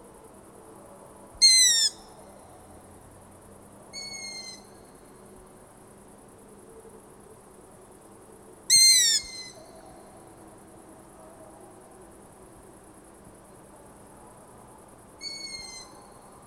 Long-eared Owl in town's park.
8 August, ~23:00